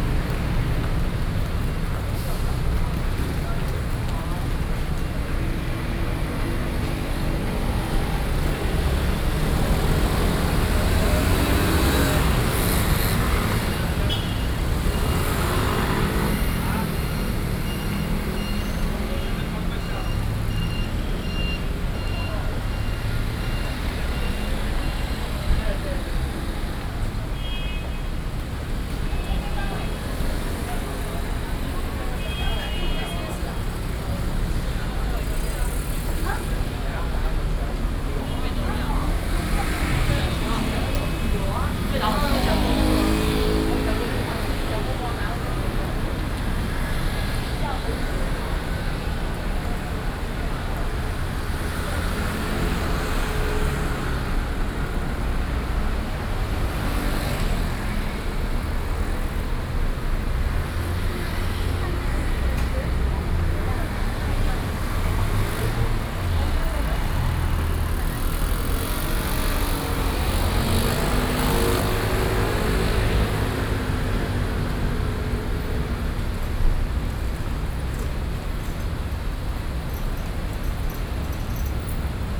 Taipei, Taiwan - Traffic noise
Traffic noise, Sony PCM D50 + Soundman OKM II